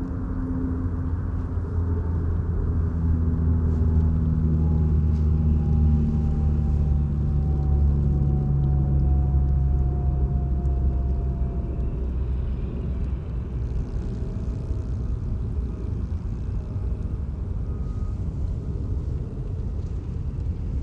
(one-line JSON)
{"title": "marshland Staten Island", "date": "2012-01-09 14:10:00", "description": "distant sounds of shipping", "latitude": "40.61", "longitude": "-74.19", "altitude": "254", "timezone": "America/New_York"}